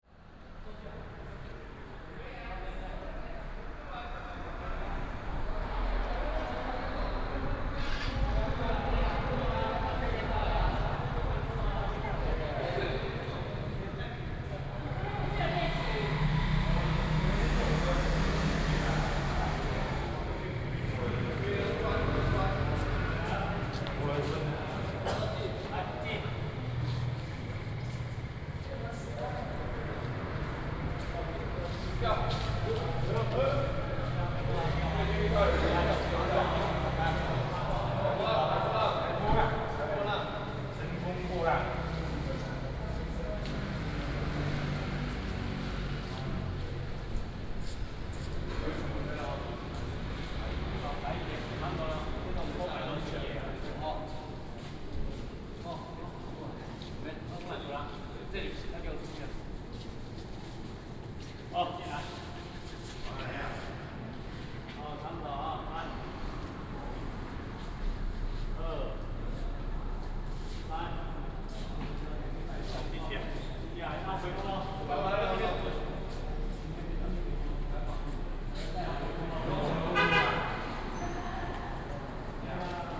三義天后宮, Miaoli County - In the temple
Community people are practicing traditional dragon dance, traffic sound, Binaural recordings, Sony PCM D100+ Soundman OKM II